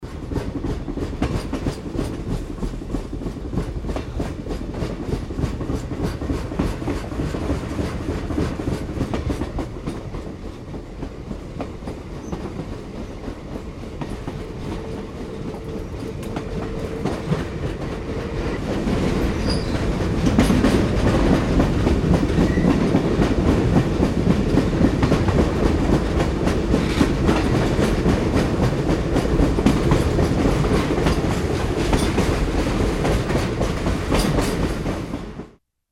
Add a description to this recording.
früjahr 07 morgens abfahrt des firmen eigenen kalk transport zuges an europas grösstem kalkabbaugebiet, project: :resonanzen - neandereland soundmap nrw - sound in public spaces - in & outdoor nearfield recordings